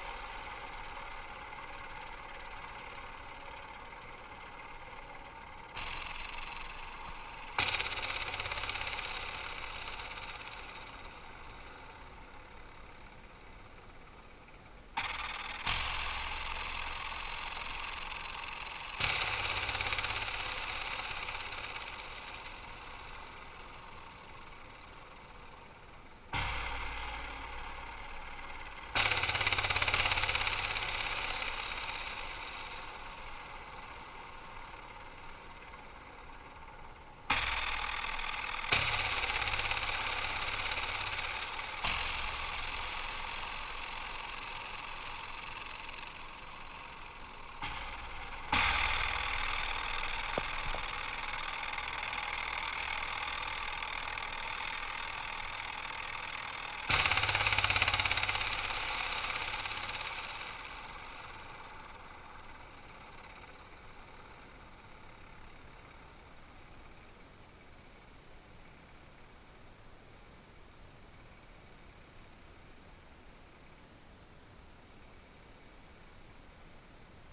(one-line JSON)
{"title": "playing barbed wire fences across from chasseline - KODAMA session", "date": "2009-09-17 15:30:00", "description": "contact micd barbed wire fence - made during KODAMA residency - september 2009", "latitude": "45.67", "longitude": "2.15", "altitude": "721", "timezone": "Europe/Berlin"}